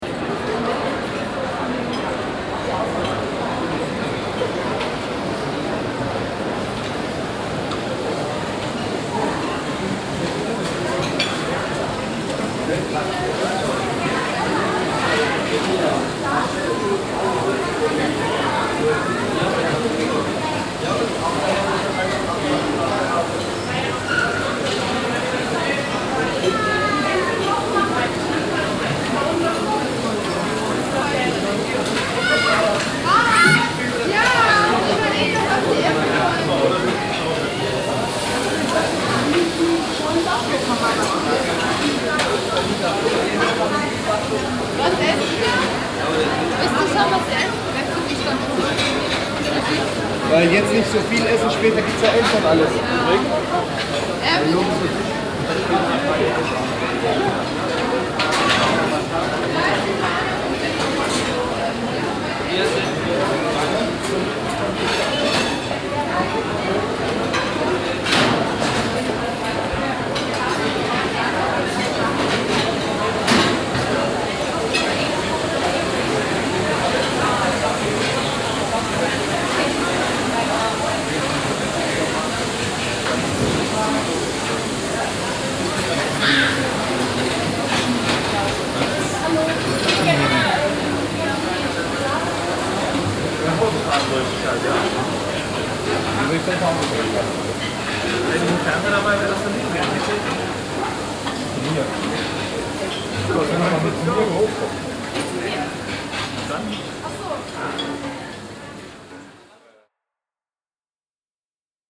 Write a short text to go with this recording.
Inside the shopping mall Limbecker Platz. The sound of people and cooking in the fast food department first floor. Im Einkaufszentrum Limbecker Platz. Der Klang von Menschen und diversen Fast Food Küchen in der Essensabteilung auf der ersten Etage. Projekt - Stadtklang//: Hörorte - topographic field recordings and social ambiences